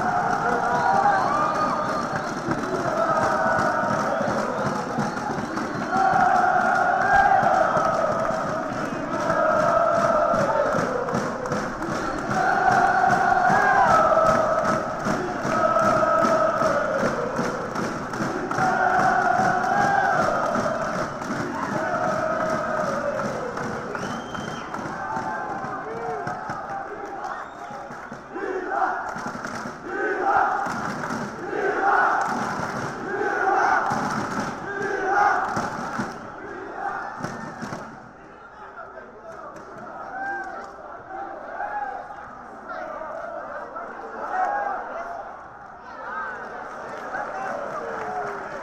Serra Dourada, Jogo do Vila Nova F.C.
Jogo do Vila Nova serie B
Goiânia - Goiás, Brazil, August 2009